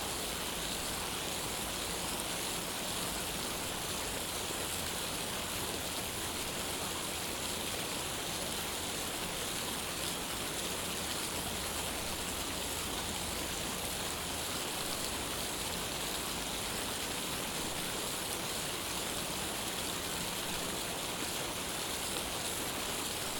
The fountain was dyed pink for breast cancer awareness